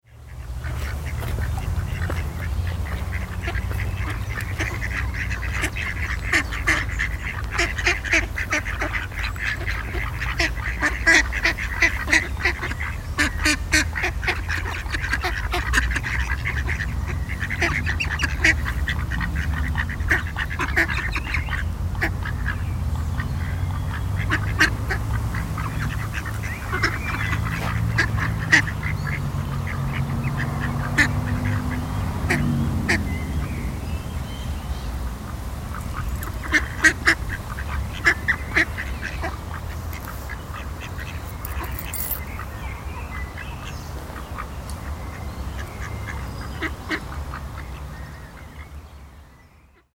lippstadt, ducks
typical sound of this city ("venice of westphalia"). a flock of water birds: ducks, coots, swans etc.
recorded june 23rd, 2008.
project: "hasenbrot - a private sound diary"
Lippstadt, Germany